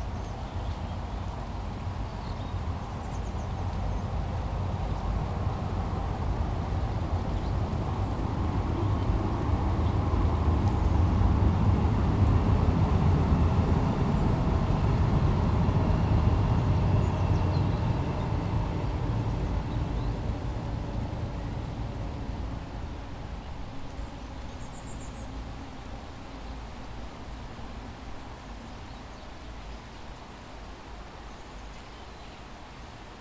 Walking Festival of Sound
13 October 2019
Under metro bridge, rain, tweeting brids, LNER train
England, United Kingdom, 13 October 2019